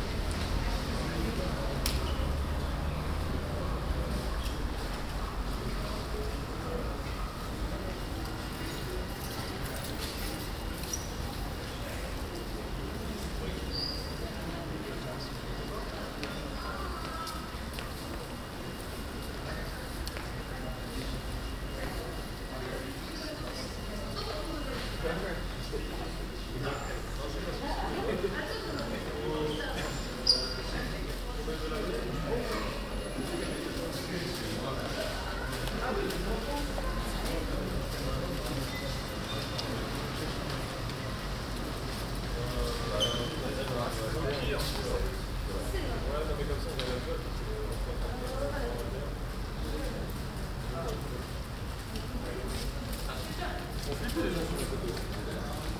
Uilebomen, Den Haag, Nederland - Turfmarkt
Pedestrians, cyclists and a violist at the Turfmarkt; a passageway between the Central Station and the city centre.
Binaural recording